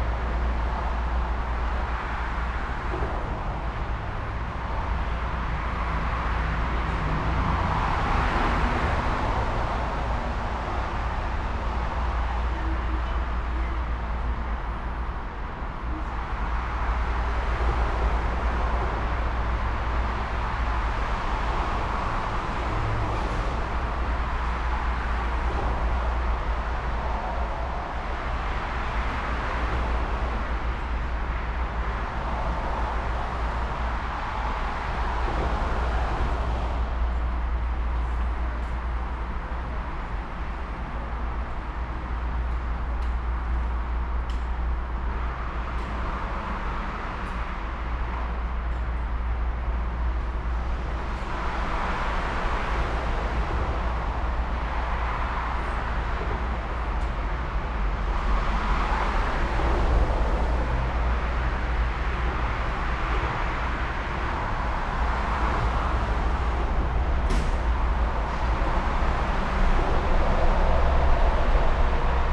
MacArthur BART, Oakland, CA, USA - MacArthur BART Station
Recorded with a pair of DPA 4060s and a Marantz PMD661